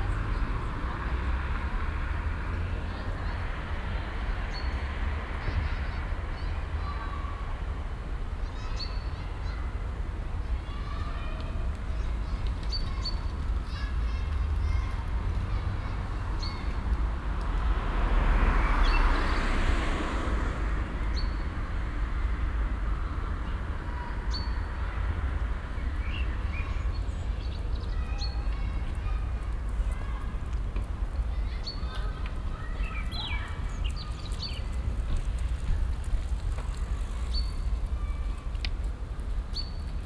{"title": "cologne, stadtgarten, parkeingang spichernstrasse", "date": "2008-06-18 20:37:00", "description": "stereofeldaufnahmen im juni 2008 mittags\nparkatmo mit fussballspielenden kindern, fussgänger und strassenverkehr spichernstrasse\nproject: klang raum garten/ sound in public spaces - in & outdoor nearfield recordings", "latitude": "50.94", "longitude": "6.94", "altitude": "53", "timezone": "Europe/Berlin"}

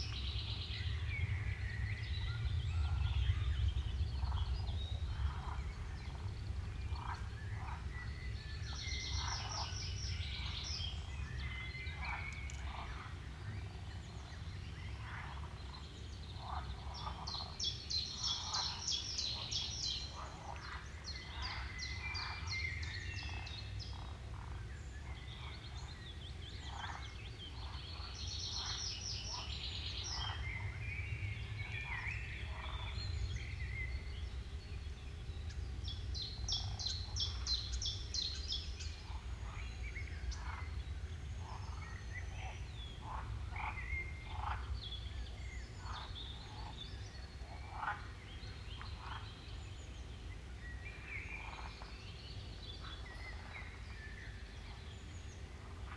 {"title": "Stabulankiai, Lithuania, plane over swamp", "date": "2020-05-04 17:35:00", "description": "swamp life and rare covid-19 plane over it", "latitude": "55.52", "longitude": "25.45", "altitude": "168", "timezone": "Europe/Vilnius"}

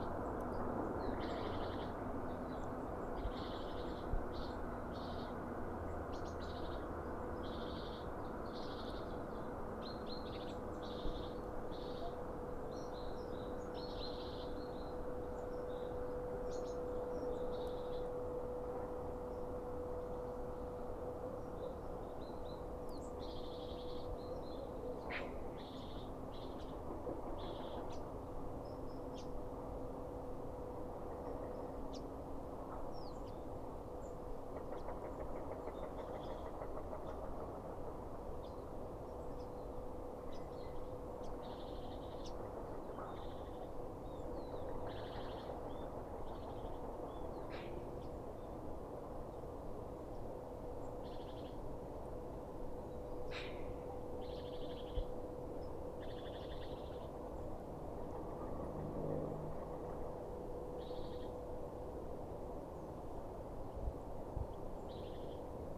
{"title": "вулиця Шмідта, Костянтинівка, Донецька область, Украина - Звуки птиц и промышленное производство", "date": "2018-11-11 08:10:00", "description": "Пение птиц в кустах на руинах промышленного предприятия", "latitude": "48.52", "longitude": "37.69", "altitude": "83", "timezone": "Europe/Kiev"}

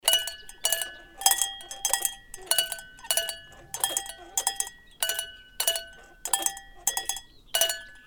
{"title": "hoscheid, sound object, musikalische Zaungäste", "date": "2011-06-02 16:45:00", "description": "At the Hoscheid Klangwanderweg - sentier sonore. A Sound object entitled Musikalische Zaungäste. The object consists out of a row of heads attached to a wooden poles, that are connected by a metal pole, which holds different tuned metal cow bells.\nHoscheid, Klangobjekt, musikalische Zaungäste\nAuf dem Klangwanderweg von Hoscheid. Ein Klangobjekt mit dem Titel Musikalische Zaungäste. Das Objekt besteht aus einer Reihe von Köpfen, die an hölzernen Pfählen angebracht sind, die mit einem metallenen Pfahl verbunden sind. Dieser hat verschieden gestimmte metallene Kuhglocken.\nMehr Informationen über den Klangwanderweg von Hoscheid finden Sie unter:\nHoscheid, élément sonore, badauds musicaux\nSur le Sentier Sonore de Hoscheid. Un objet sonore intitulé les Badauds Musicaux. L’objet consiste en une série de têtes attachées à un poteau en bois et connectés par une barre métallique qui supporte des cloches de vaches aux sons différents.", "latitude": "49.94", "longitude": "6.07", "altitude": "463", "timezone": "Europe/Luxembourg"}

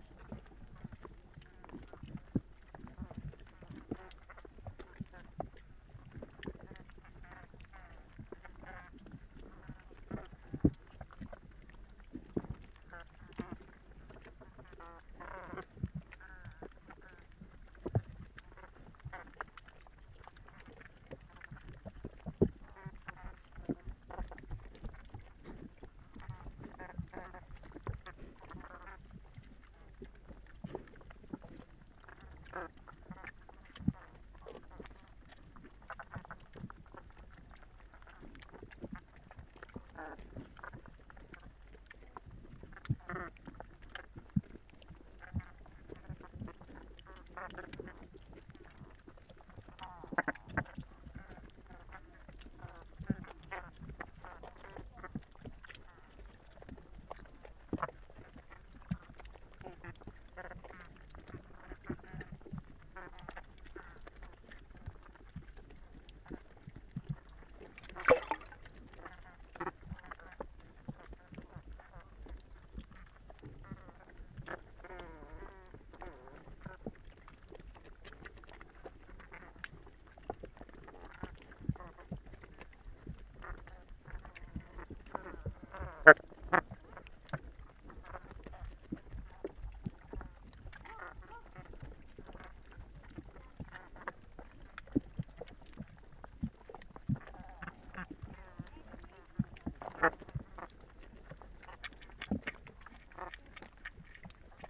{"title": "Gilão River, Tavira, PT", "date": "2010-08-22 02:00:00", "description": "Hidrophone recording at River Gilão", "latitude": "37.13", "longitude": "-7.65", "altitude": "7", "timezone": "Europe/Berlin"}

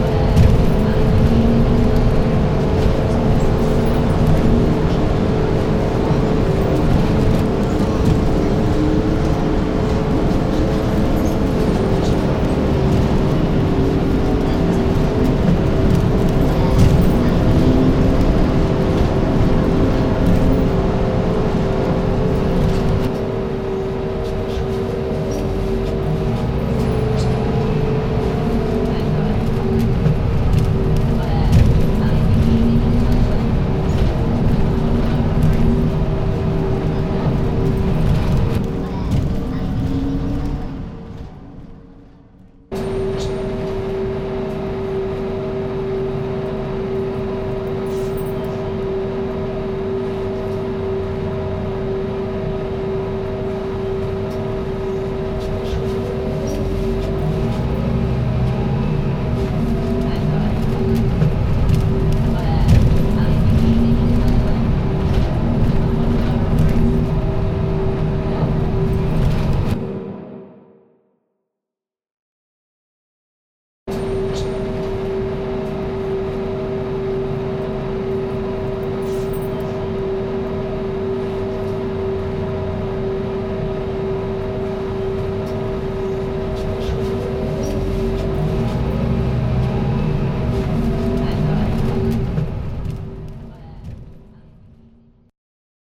{"title": "Wearmouth Bridge, Sunderland, UK - Bus journey into Sunderland City Centre", "date": "2016-08-15 13:00:00", "description": "Travelling on a the Number 4 Bus into Sunderland City Centre. Leaving from the Northside of the Rive Wear ending up in John Street, Sunderland.\nThe original source recording has been processed, looped, layered and manipulated to show a more exciting, alternative way of experiencing the normal mundane way of travel, while still keeping the integrity and authenticity of the first captured recording.", "latitude": "54.91", "longitude": "-1.38", "altitude": "13", "timezone": "Europe/London"}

